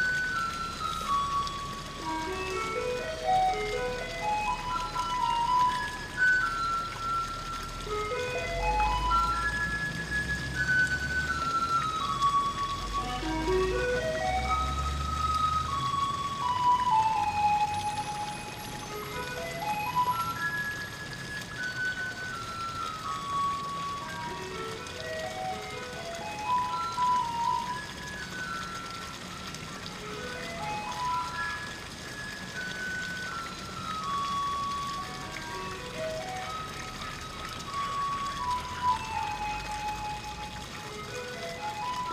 No. 42號, Fuhou Street, North District, Hsinchu City, Taiwan - East Fountain in the Moat Park
Along the water's edge in the moat park, a basic fountain splashes near a bridge. A garbage truck plays its tune as it drives down the street. Unidentified animals make a ruckus from several floors up inside an apartment building. Stereo mics (Audiotalaia-Primo ECM 172), recorded via Olympus LS-10.
臺灣省, 臺灣